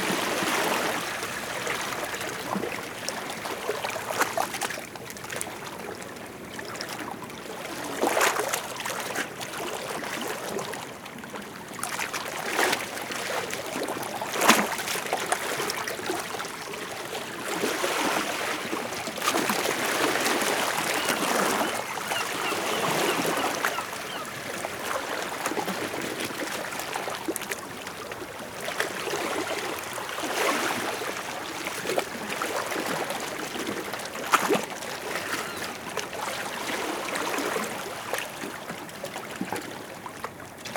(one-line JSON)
{"title": "Chemins des douaniers, Dinard - Waves on the rocks in Dinard", "date": "2020-08-05 10:44:00", "description": "Waves on the rocks in Dinard, under the \"Chemin des Douaniers\".\nSound of the wave, some background noise far away from the beach and the city.\nRecorded by an ORTF Schoeps CCM4 x 2 in a Cinela Suspension and windscreen\nDuring the workshop “Field-Recording” by Phonurgia 2020", "latitude": "48.64", "longitude": "-2.06", "altitude": "20", "timezone": "Europe/Paris"}